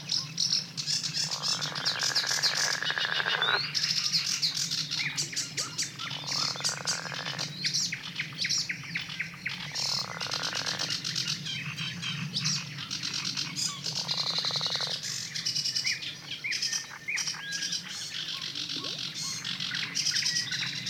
Peipsi lakeside frogs and birds, South Estonia
made during a late May night time field recording excursion to the Rapina Polder
29 May 2011, 00:55